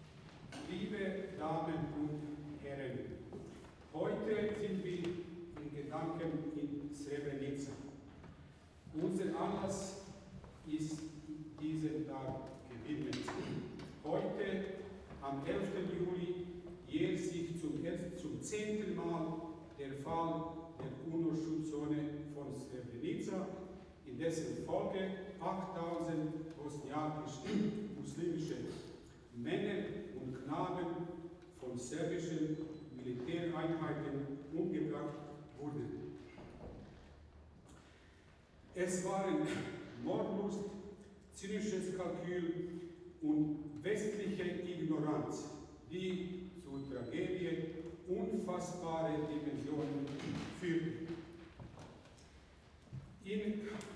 Zürich, Semper Aula ETH, Schweiz - Raumklang und Ansprache
In Gedanken an das Massaker in Srebrenica. Vor dem Konzert von Maria Porten "11. Juli 95 Srebrenica.
Zürich, Switzerland, 11 July 2005, 19:00